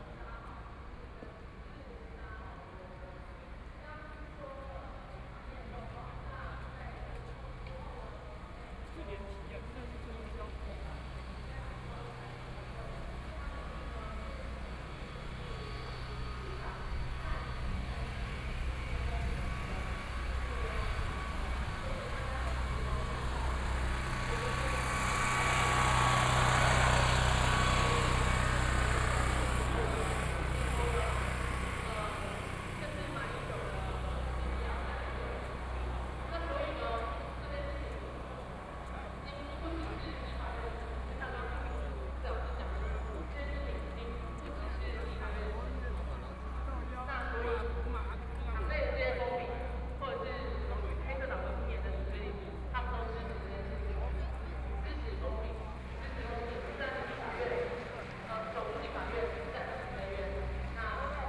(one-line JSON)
{"title": "Jinan Rd., Zhongzheng Dist. - Student activism", "date": "2014-03-23 20:41:00", "description": "Walking through the site in protest, People and students occupied the Legislature Yuan", "latitude": "25.04", "longitude": "121.52", "altitude": "18", "timezone": "Asia/Taipei"}